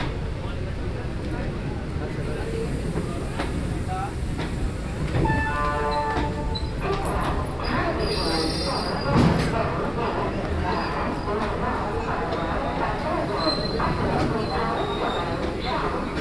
Madgaon, railway station1
India, Goa, Madgaon, Madgoa, railway station, train